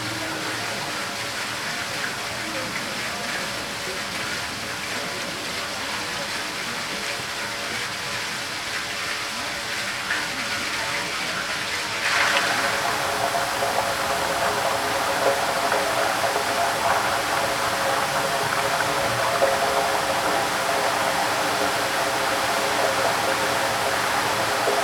Brandenburger Vorstadt, Potsdam - flow
gurgling gush of water in a big, iron pipe. mics touching the surface of the pipe.